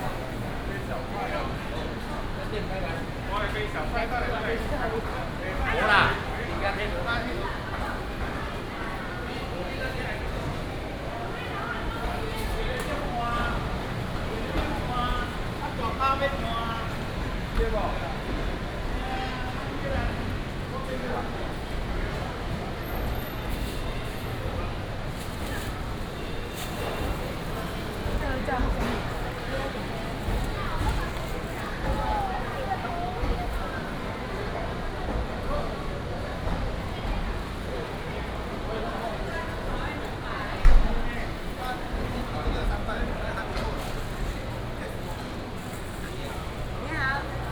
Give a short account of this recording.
Walking through the new market